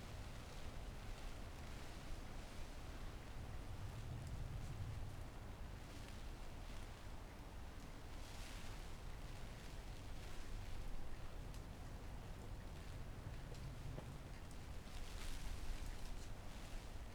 Chapel Fields, Helperthorpe, Malton, UK - inside polytunnel ... outside storm ...
inside polytunnel outside storm ... dpa 4060s on pegs to Zoom H5 clipped to framework ...